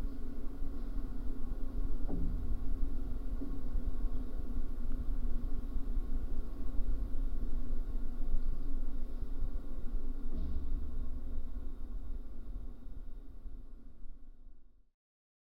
Paliesius Manor, Lithuania, supporting wires
contact microphones on the iron wires supporting the construction of concert hall. low frequencies!